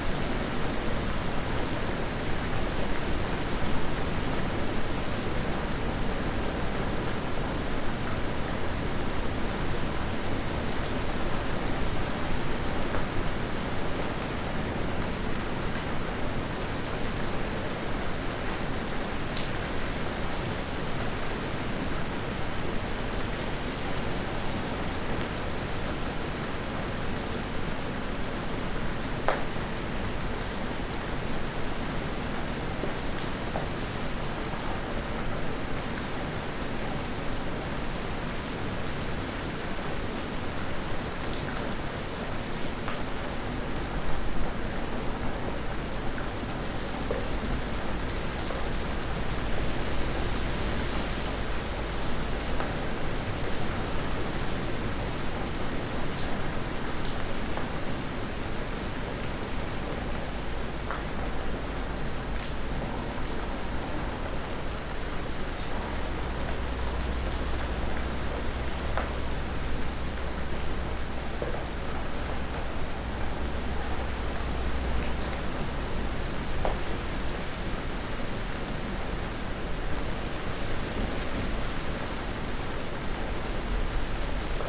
Heavy rain in some abandoned (from Soviet times) building
9 August 2021, 15:10